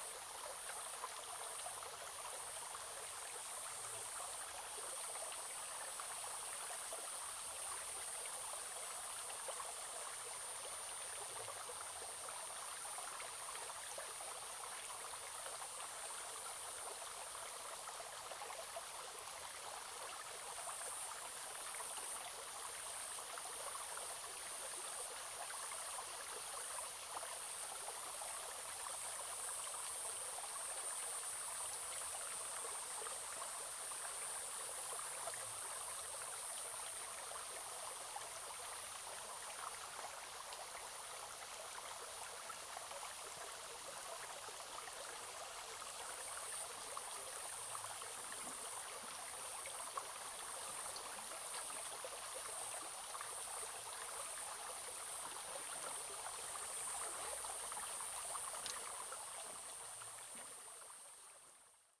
種瓜坑溪, 成功里 - Stream sound
Small streams, In the middle of a small stream
Zoom H2n MS+ XY+Spatial audio